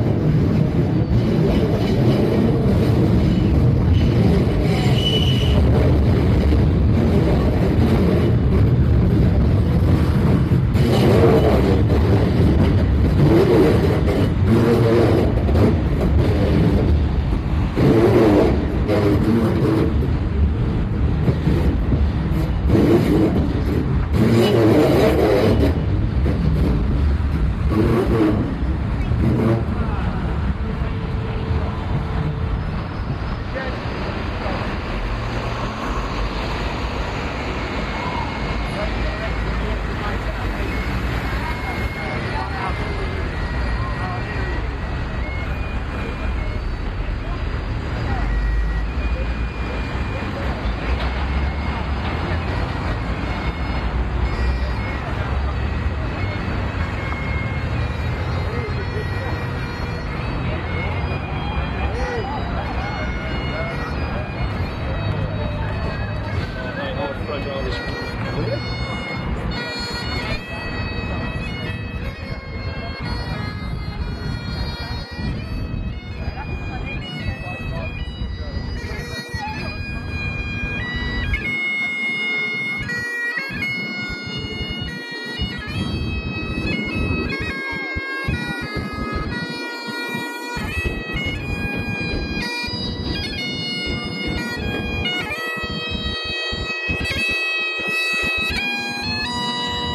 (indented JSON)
{
  "title": "walk across embankment bridge",
  "date": "2011-05-16 15:24:00",
  "description": "Screeching train with its grinding of brakes. Then halfway across busker playing bagpipes.",
  "latitude": "51.51",
  "longitude": "-0.12",
  "altitude": "3",
  "timezone": "Europe/London"
}